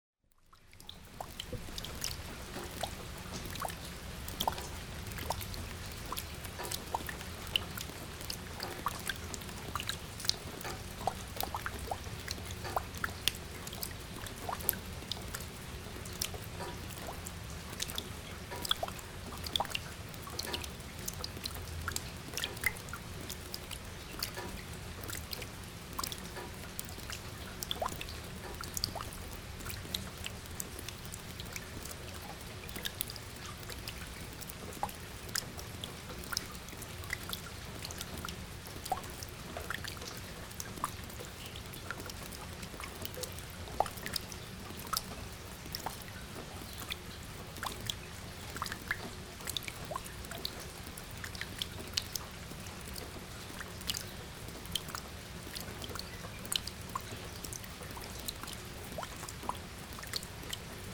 Rain water run-off dropping into a collection trough (plastic tub). Black-eyed bulbul calls. Zoom Q3HD in audio only mode. Internal mics.
Randburg, South Africa